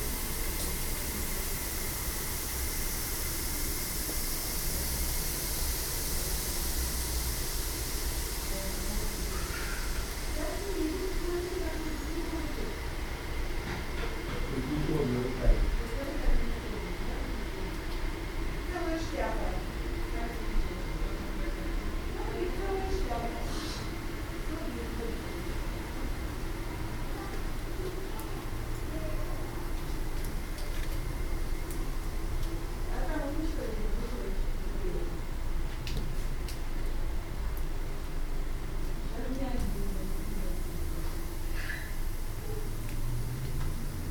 Hortus Botanicus, Leiden.
Hisses and drops of the moisturising system in the Hortus Leiden.
Zoom H2 recorder with SP-TFB-2 binaural microphones.